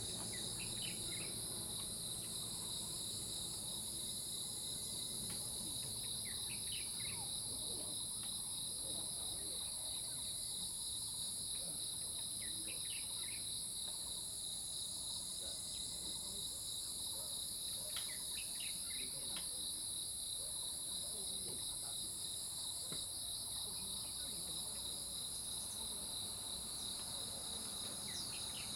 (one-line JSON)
{"title": "金龍山日出平台, 魚池鄉 - birds and Insect sounds", "date": "2016-05-18 06:12:00", "description": "birds and Insect sounds\nZoom H2n MS+XY", "latitude": "23.90", "longitude": "120.91", "altitude": "811", "timezone": "Asia/Taipei"}